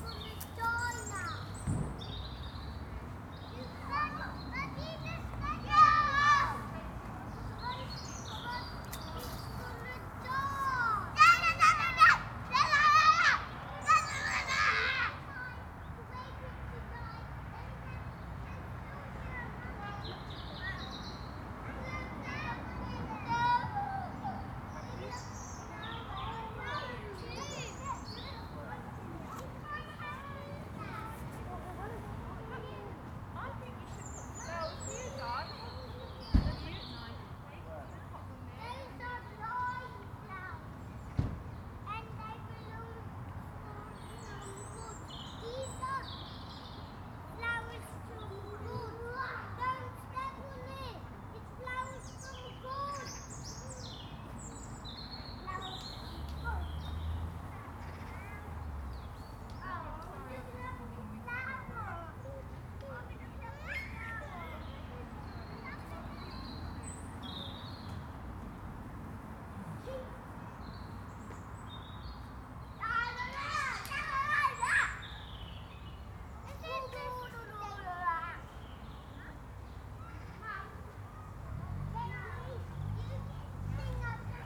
Gladstone Park, London - Gladstone Park
Sunny day in Gladstone Park, kids playing